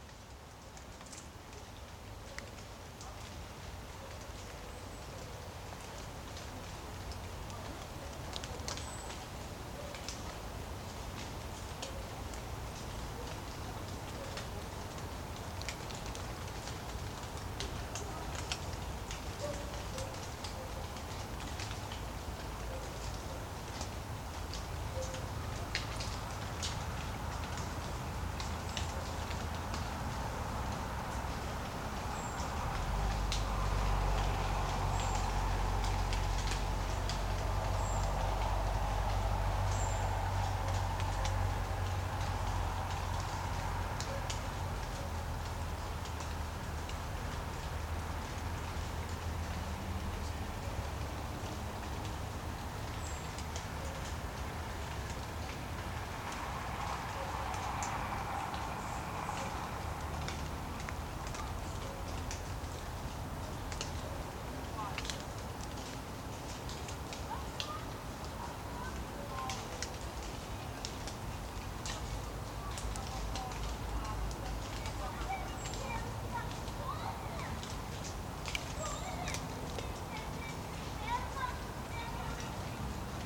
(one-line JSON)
{"title": "Vilnius, Lithuania, listening to drizzle", "date": "2020-10-17 16:15:00", "description": "standing in the autumn colored wood near big town and listening to drizzle...", "latitude": "54.66", "longitude": "25.31", "altitude": "175", "timezone": "Europe/Vilnius"}